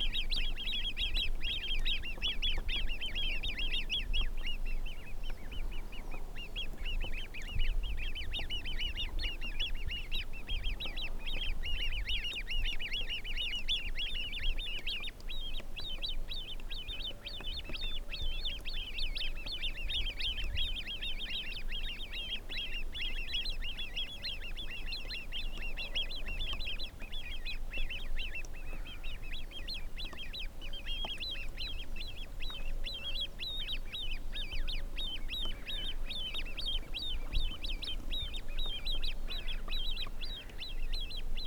Unieszewo, Jezioro, Wiosła - Small duckies, calm lake
Poland, 27 July, ~15:00